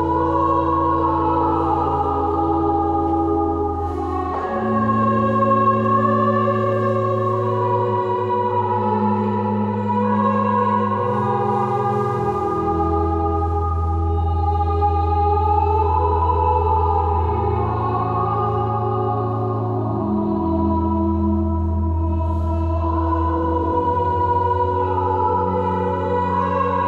Moscow Immaculate Conception Catholic Cathedral - Novus Ordo p1
Moscow Immaculate Conception Catholic Cathedral - Novus Ordo